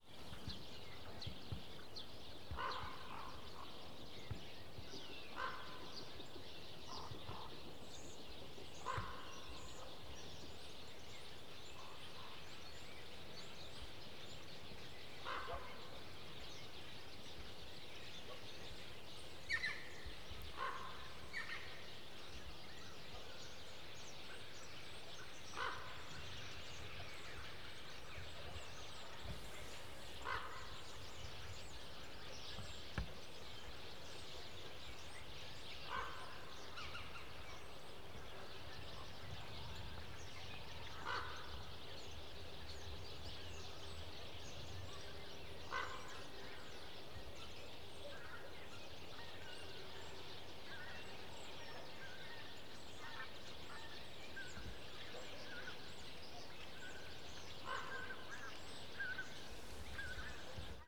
Suchy Las, road surrounding the landfill site - raven passing
ravens screams in the forest. proper wet natural reverb
Poland, 3 February, 14:57